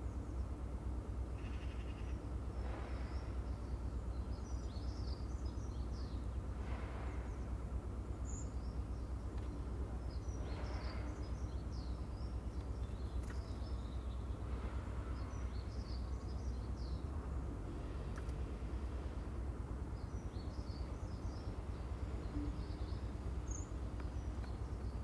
Contención Island Day 39 inner northwest - Walking to the sounds of Contención Island Day 39 Friday February 12th
The Drive Westfield Drive Parker Avenue Brackenfield Road Brackenfield Court
Snow
drives cleared
a stone lion
with a mane of snow
Drifting sound
muffled music
and a building site dumper truck
England, United Kingdom, February 2021